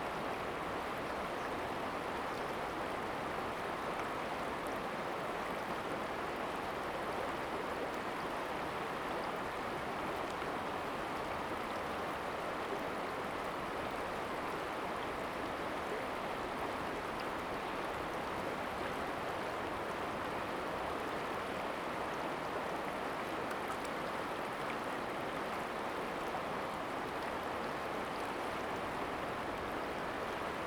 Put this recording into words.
river, Stream sound, Zoom H2n MS+XY